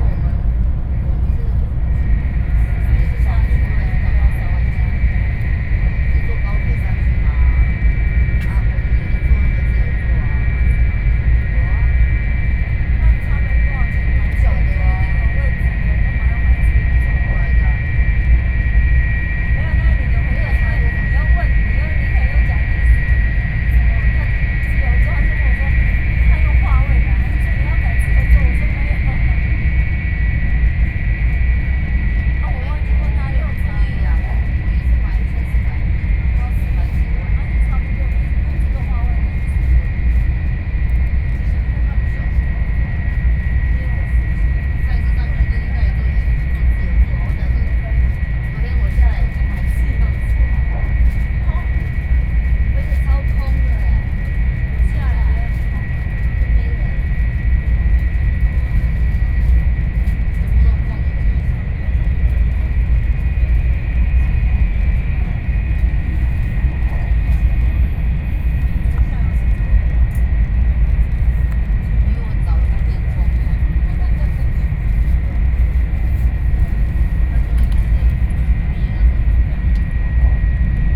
Yanchao, Kaoshiung - high-speed rail
inside of the high-speed rail, Sony PCM D50 + Soundman OKM II
高雄市 (Kaohsiung City), 中華民國, 14 April